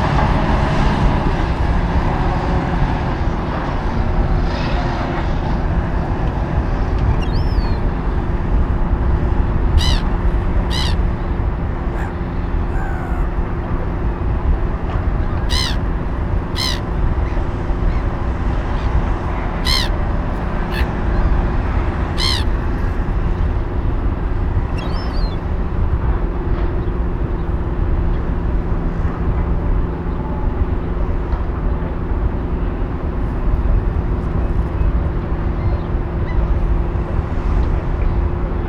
Oslo, Opera House [hatoriyumi] - Gabbiani, bambini e traffico lontano
Gabbiani, bambini e traffico lontano
24 April 2012, 17:00